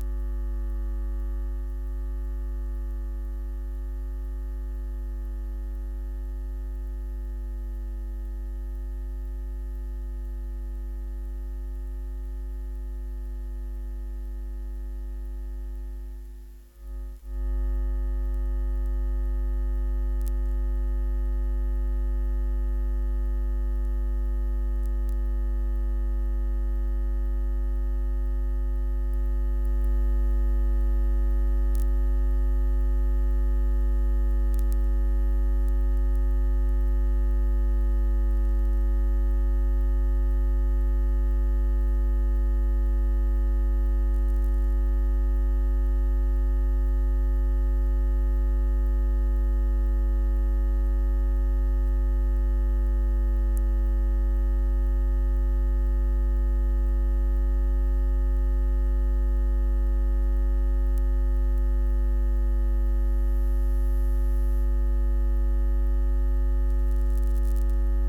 Under the pylons, Waterloo Meadows, Reading, Reading, Reading, UK - Electromagnetic hum
I wanted to hear the electricity that makes all this possible - that makes recording sounds and uploading them to aporee and sharing them online etc. etc. into a feasible thing. I took an electric pickup coil and walked underneath the nearest accessible pylon to my home. You can hear in the recording that I am walking under and around the cables of the pylon; the loudest sounds are when I am standing directly beneath the wires. It's amazing to think of how this sound imbricates all our gadgets and the landscape.
12 January 2015